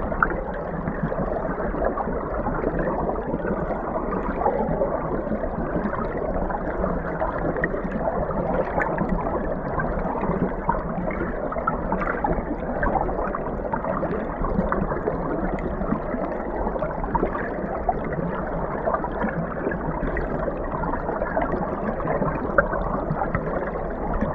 Meramec River, Valley Park, Missouri, USA - Meramec River Valley Park
Hydrophone recording of Meramec River at Valley Park
Missouri, United States